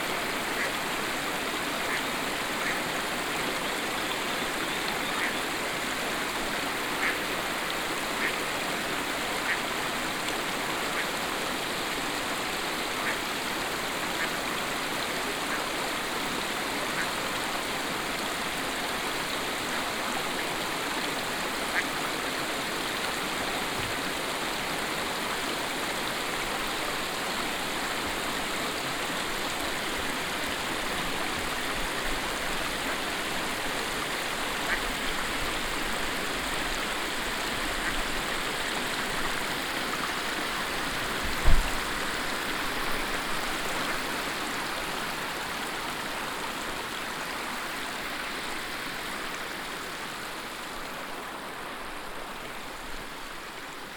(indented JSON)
{
  "title": "vianden, old river fortification",
  "date": "2011-08-09 22:05:00",
  "description": "Standing inside the river Our at an old river fortification, that is now rebuild to allow the fish to swim the river upwards again.\nVianden, alte Flussbefestigung\nStehend im Fluss Our an einem alten Flusswehr, der jetzt wieder umgebaut wird, um den Fischen zu ermöglichen, flussaufwärts zu schwimmen.\nVianden, vieille fortification de la rivière\nDebout dans la rivière Our, sur une ancienne fortification qui a été reconstruite pour permettre aux poissons de remonter le cours de la rivière.",
  "latitude": "49.93",
  "longitude": "6.22",
  "altitude": "207",
  "timezone": "Europe/Luxembourg"
}